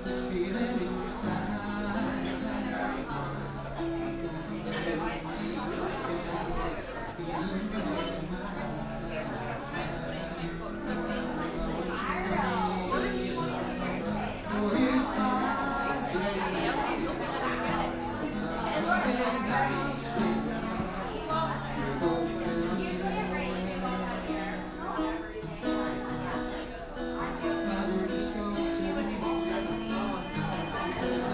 Pleasanton Hwy., Bear Lake, MI - Restaurant Interior as Snow Descends
A big crowd is gathered on an early Wednesday night, as a ton of fresh snow blankets the outdoors. Hubbub and live music at Grille 44, currently the only bar and restaurant open evenings in Bear Lake. Stereo mic (Audio-Technica, AT-822), recorded via Sony MD (MZ-NF810, pre-amp) and Tascam DR-60DmkII.
Bear Lake, MI, USA, 23 March